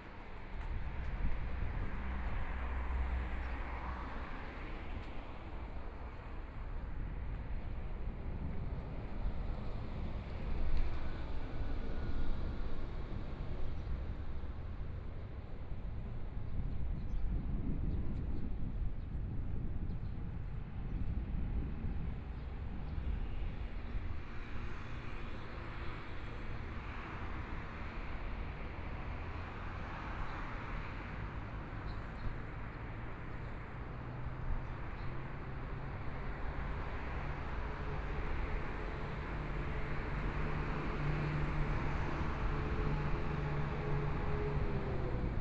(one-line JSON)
{"title": "Zhongyang Rd., Ji'an Township - walking on the Road", "date": "2014-02-24 10:48:00", "description": "walking on the Road, Environmental sounds, Traffic Sound\nBinaural recordings\nZoom H4n+ Soundman OKM II", "latitude": "23.99", "longitude": "121.59", "timezone": "Asia/Taipei"}